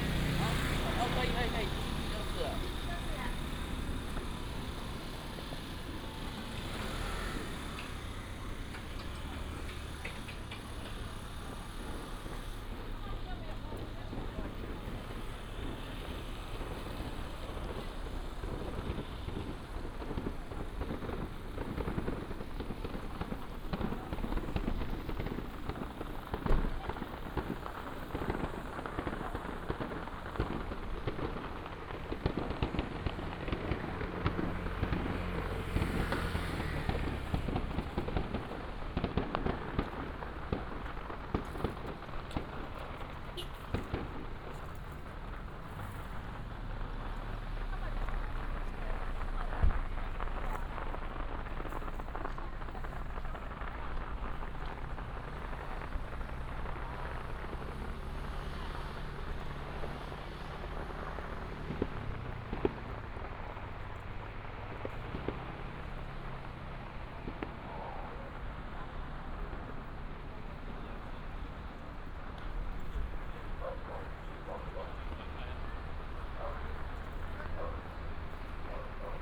通霄鎮白西里, Miaoli County - Near the fishing port
Near the fishing port, Fireworks and firecrackers, Traffic sound
9 March 2017, 10:36